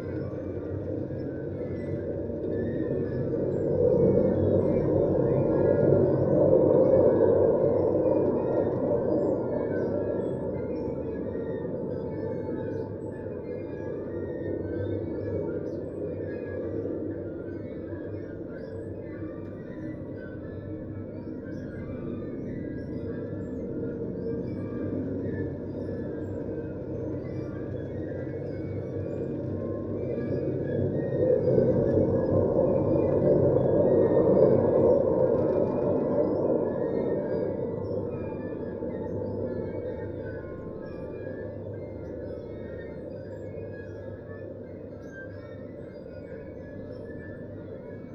Plaza Simon Bolivar, Valparaíso, Chile - playground swings and train, contact mic
stereo contact microphone attached to the railing around a kid's train
Región de Valparaíso, Chile, 30 November